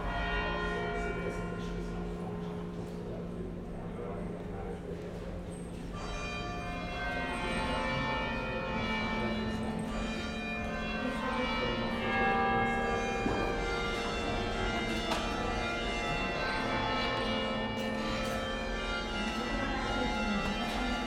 Parque do Bom Jesus, Portugal - Church bells playing - Church Bells Playing
Bells playing recorded inside the church of Bom Jesus de Braga Sanctuary, with people moving and whispering/talking. Recorded with a SD mixpre6 and a AT BP4025 XY stereo microphone.